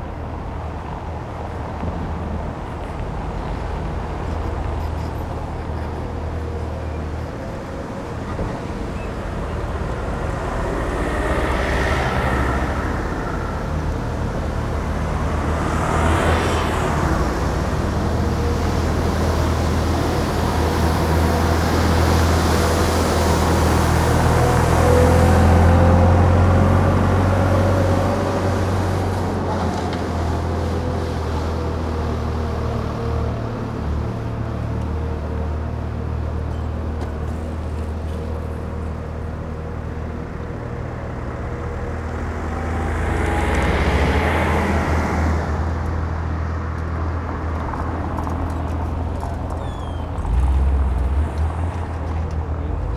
Berlin: Vermessungspunkt Maybachufer / Bürknerstraße - Klangvermessung Kreuzkölln ::: 23.01.2012 ::: 11:06